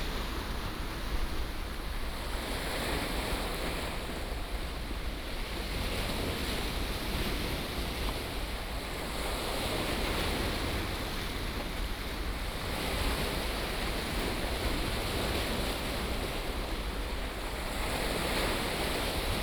{
  "title": "淡水觀海長堤, New Taipei City - River water impact on the river bank",
  "date": "2017-01-05 16:35:00",
  "description": "On the banks of the river, There are yachts on the river, River water impact on the river bank",
  "latitude": "25.18",
  "longitude": "121.42",
  "altitude": "2",
  "timezone": "GMT+1"
}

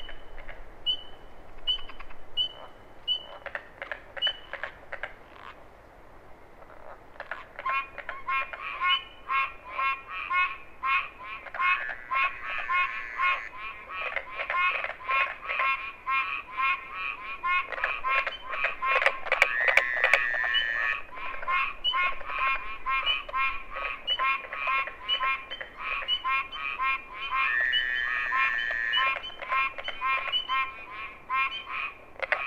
{
  "title": "Wharton State Forest, NJ, USA - Bogs of Friendship, Part Two",
  "date": "2007-05-01 20:00:00",
  "description": "This was the first field recording I attempted to make. Located in the pine barrens of New Jersey, this series of small ponds was hyperactive with frog activity. The cast of characters include: Pine Barrens Tree Frogs, Spring Peepers, Fowler's Toads, Southern Leopard Frogs, & Carpenter Frogs, and a nice piney wind. Microtrack recorder used with a pair of AT3032 omnidirectional mics.",
  "latitude": "39.74",
  "longitude": "-74.58",
  "altitude": "21",
  "timezone": "America/New_York"
}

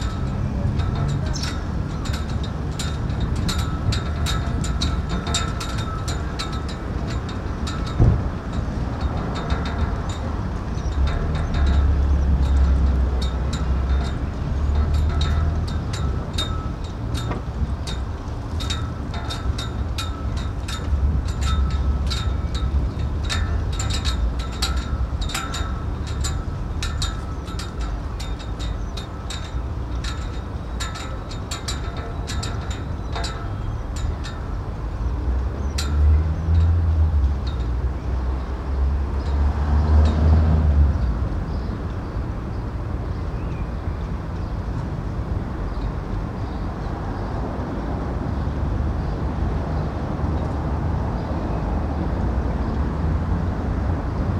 {
  "title": "Ljudski vrt Stadium, Mladinska ulica, Maribor, Slovenia - flagpoles on the field",
  "date": "2012-06-14 16:24:00",
  "description": "cables knocking against flagpoles at the one corner of maribor city football stadium, recorded through the fence.",
  "latitude": "46.56",
  "longitude": "15.64",
  "altitude": "277",
  "timezone": "Europe/Ljubljana"
}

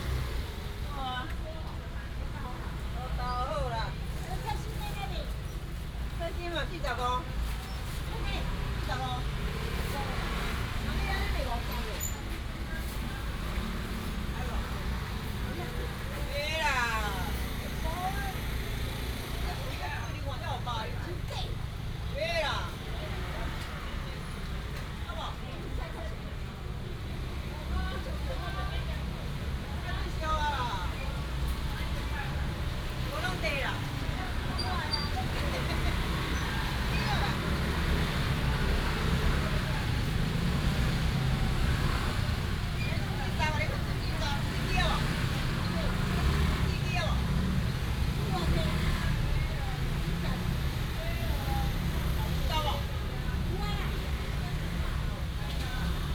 {
  "title": "Gongyuan Rd., Luodong Township - Vegetable vendors",
  "date": "2017-12-09 10:40:00",
  "description": "An old woman is selling vegetables, Vegetable vendors, Rainy day, Traffic sound, Binaural recordings, Sony PCM D100+ Soundman OKM II",
  "latitude": "24.68",
  "longitude": "121.77",
  "altitude": "14",
  "timezone": "Asia/Taipei"
}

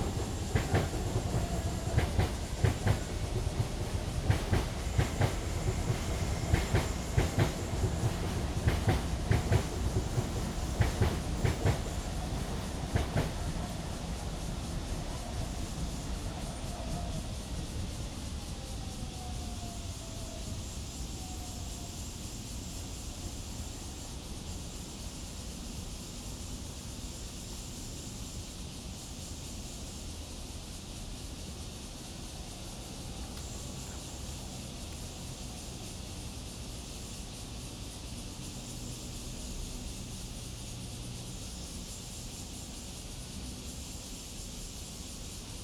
{
  "title": "台灣原生植物標本園區, Tamsui District - under the tree",
  "date": "2016-08-25 18:25:00",
  "description": "Cicada sounds, under the tree, Insect sounds, Traffic Sound, MRT trains through, Bicycle sound\nZoom H2n MS+XY +Spatial Audio",
  "latitude": "25.17",
  "longitude": "121.45",
  "altitude": "15",
  "timezone": "Asia/Taipei"
}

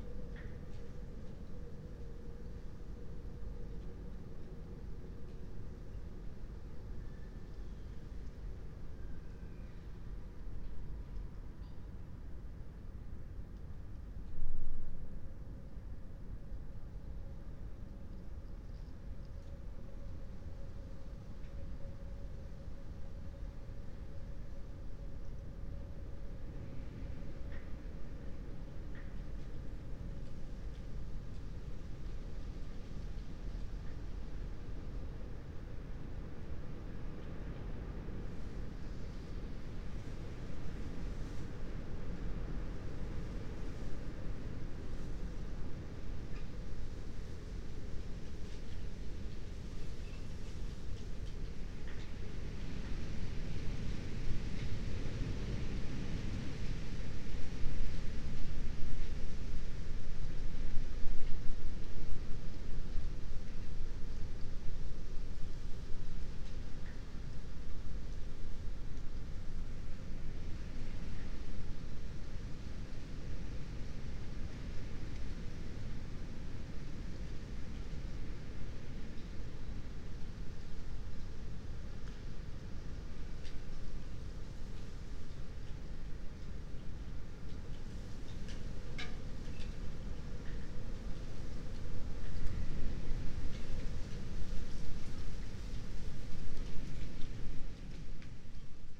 {
  "date": "2022-01-19 22:12:00",
  "description": "22:12 Berlin Bürknerstr., backyard window - Hinterhof / backyard ambience",
  "latitude": "52.49",
  "longitude": "13.42",
  "altitude": "45",
  "timezone": "Europe/Berlin"
}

